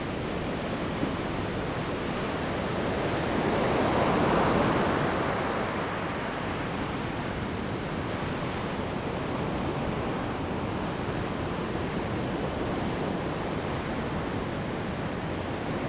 牡丹灣, Mudan Township, Pingtung County - Sound of the waves
at the beach, Sound of the waves
Zoom H2n MS+XY